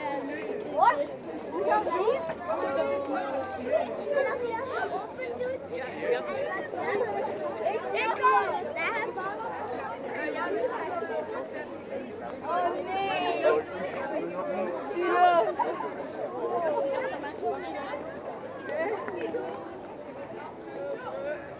Boy scouts playing on the wide main square of the town, a local market and Peruvian people selling rubbish.